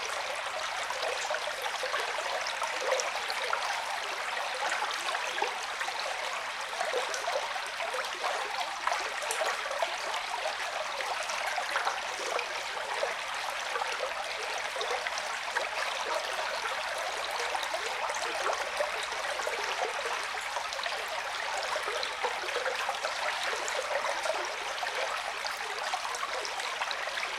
Lithuania, Utena, at the tube
November 2011